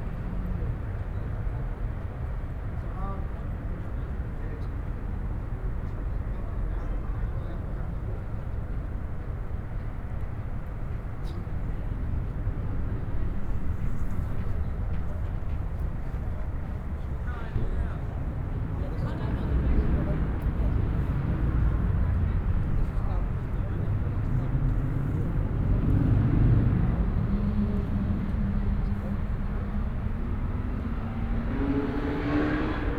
Platz der Deutschen Einheit, Hamm, Germany - in front of city library closed doors
lingering in front of the city library entrance, locked doors, usually it would be busy in and out here this time...
Nordrhein-Westfalen, Deutschland, 1 April 2020, 17:15